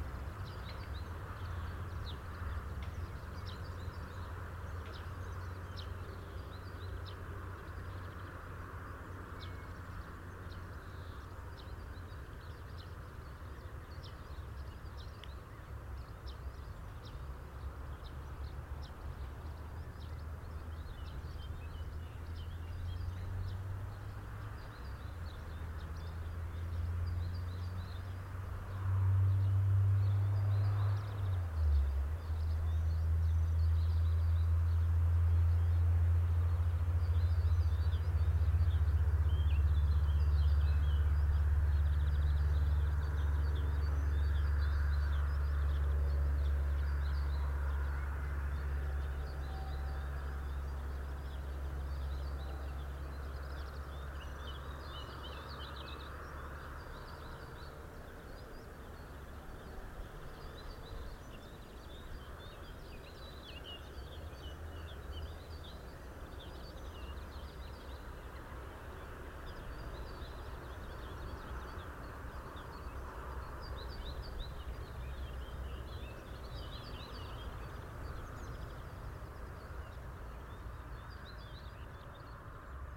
Weimar, Deutschland - westpunkt
SeaM (Studio fuer elektroakustische Musik) klangorte - WestPunkt
Germany, 23 April 2012